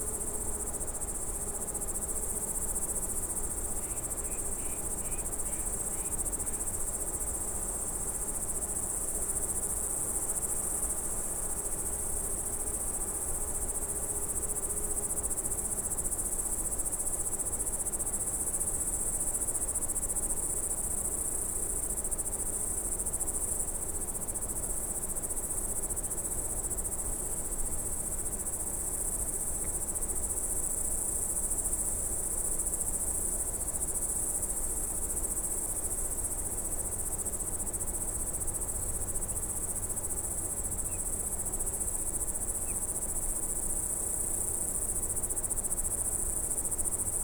Sunny autumn evening on a mountain meadow in West Tatras. Sound of crickets, few bees from nearby small beehive, few birds, wind in coniferous forest, distant creek.